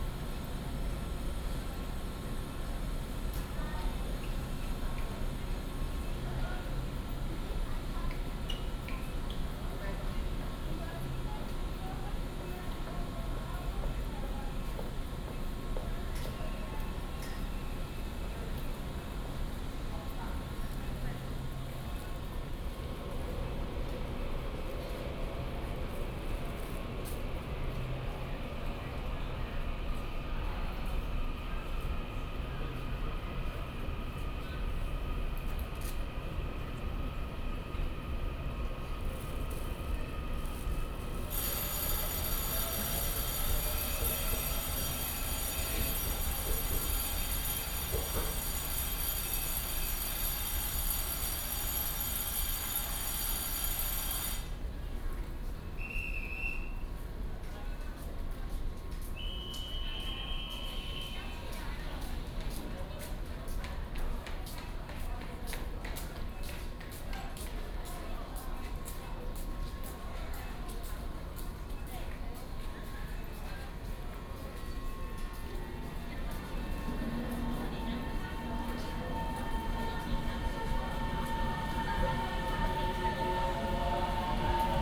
At the station platform, The train arrives and departs
Zhongli District, 健行路地下道, 7 February, 17:39